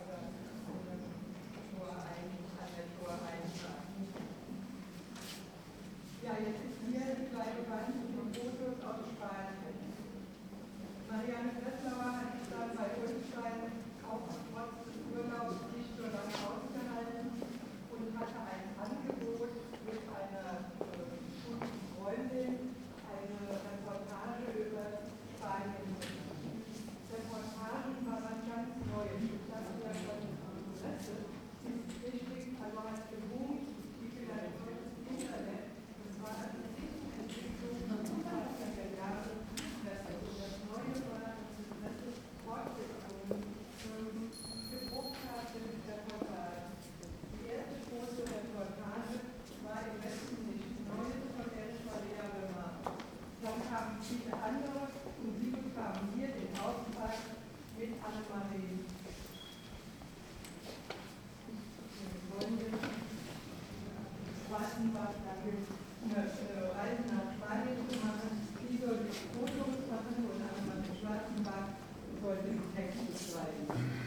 a walk through the berlinische galerie (with guided tour to an exhibition of marianne breslauer in the background)
the city, the country & me: october 31, 2010